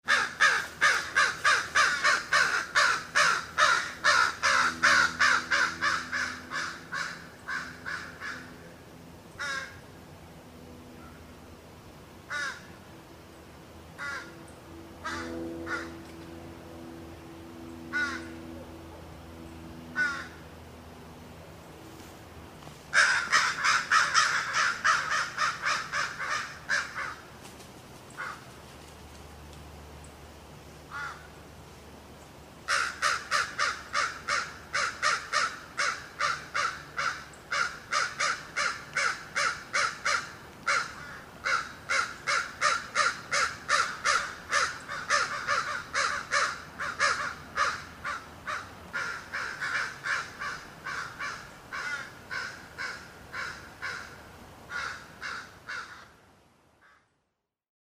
Montreal: Cimitiere de Notre-Dame-des-Neiges - Cimitiere de Notre-Dame-des-Neiges
equipment used: Nagra Ares MII
Crows in the cemetry
QC, Canada, 24 July 2008, 16:18